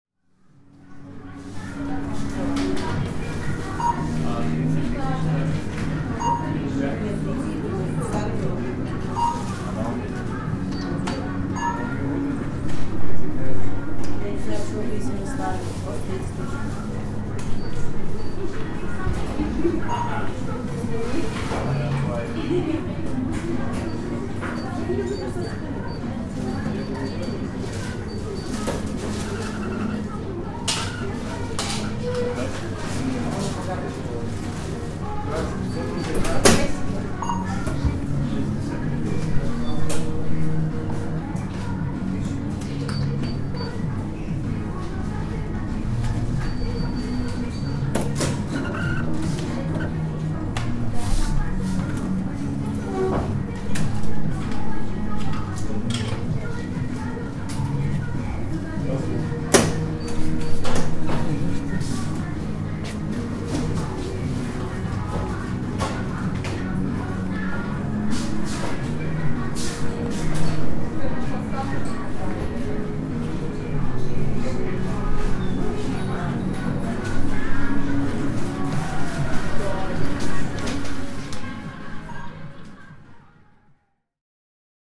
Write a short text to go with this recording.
Saturday afternoon in the supermarket